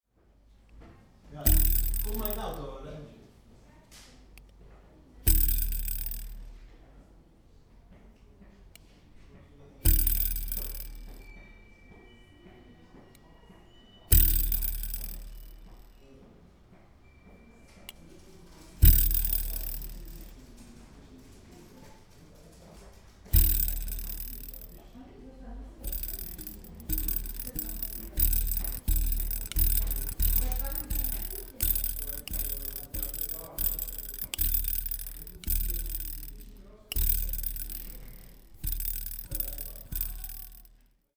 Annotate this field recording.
20.11.2008 12:20 kleine teezange mit erstaunlichem bass im nahfeld /, little tea nipper, great bass in nearfild listening